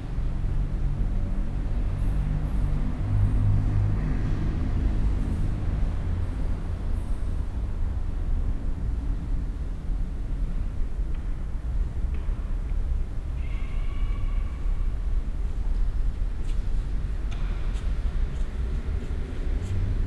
Paris, Saint Ambroise Church, end of a Mass
End of a Mass, inside church. Footsteps leaving.
Paris, France, 20 May